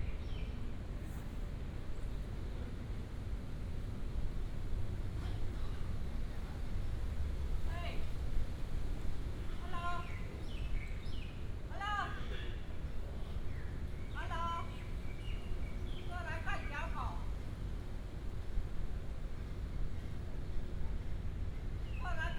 甘泉公園, Guanyin Dist., Taoyuan City - in the Park
in the Park